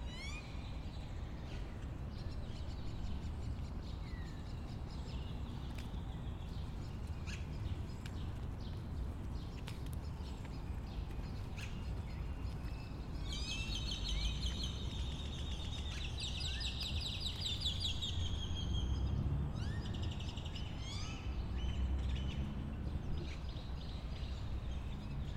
Lago del Bosque de Chapultepec. Lunes.